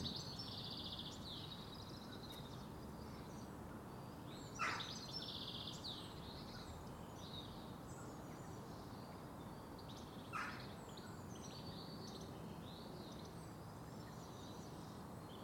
Contención Island Day 61 inner west - Walking to the sounds of Contención Island Day 61 Saturday March 6th
The Drive
Two runners
two cars
one plane
In the sunshine
a blue tit calls
and a nuthatch responds
Pushed by tree roots
the kerb stones
billow down the street